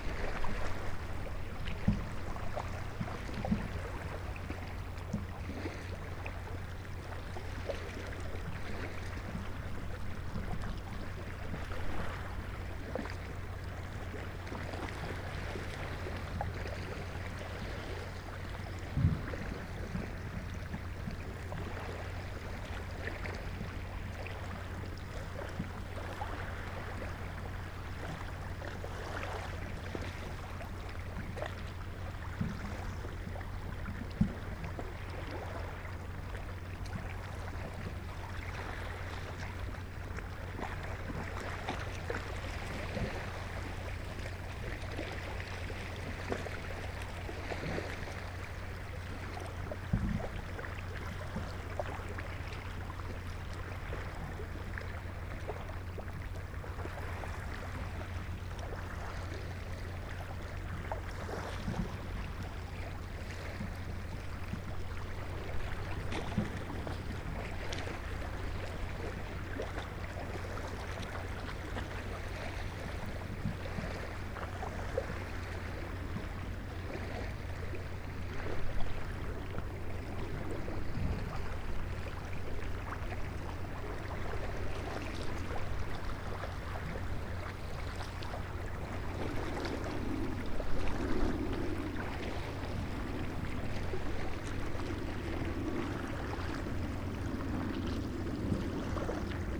recording with 2 x neumann km184, AB, stereo on 2008.01.12, 01:00 in the morning, low wind, silence